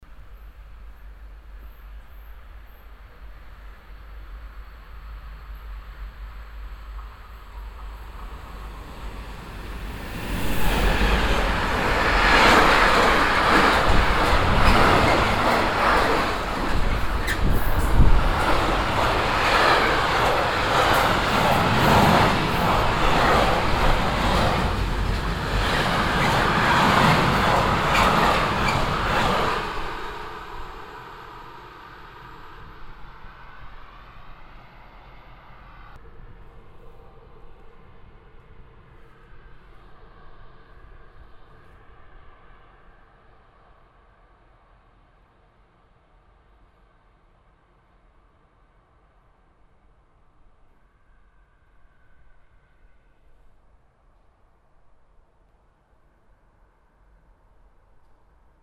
rudolstadt, station, freighttrain passing
Nearby the station at the railroad tracks. The sound of a fast freighttrain passing by. I was a bit astonished of the powerful wind that was effected by the train.
soundmap d - topographic field recordings and social ambiences